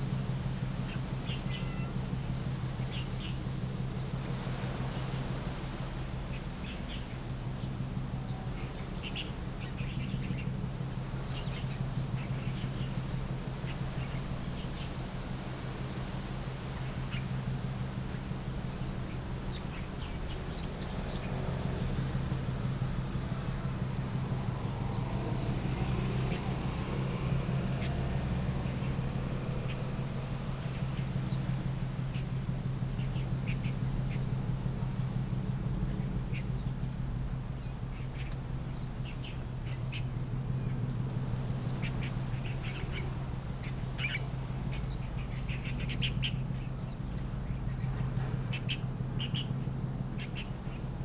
復興里, Magong City - Abandoned village
Abandoned village, Birds singing, Boats traveling, In the edge of the woods, Wind, Traffic Sound
Zoom H6 +Rode NT4
Penghu County, Taiwan, October 2014